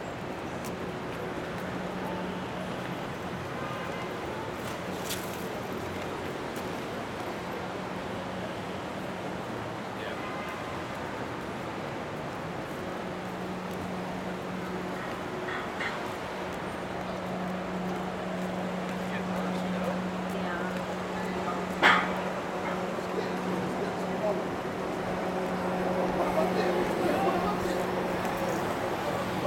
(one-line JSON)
{"title": "Lexington Ave, New York, NY, USA - Midtown Walk", "date": "2022-08-16 13:03:00", "description": "Walking around Lexington Avenue.", "latitude": "40.76", "longitude": "-73.97", "altitude": "19", "timezone": "America/New_York"}